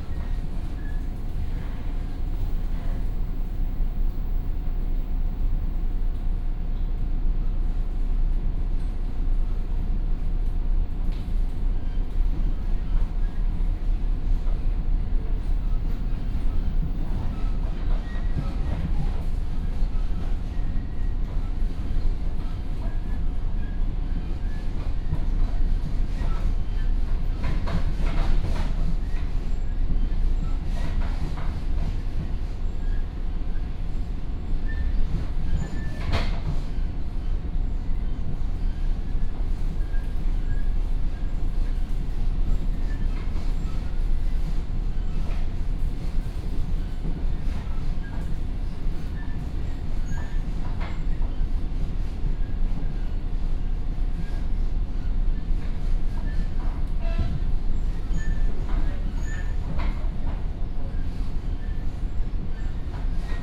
Dadu District, Taichung City - Coastal Line (TRA)
Coastal Line (TRA), from Jhueifen station to Dadu Station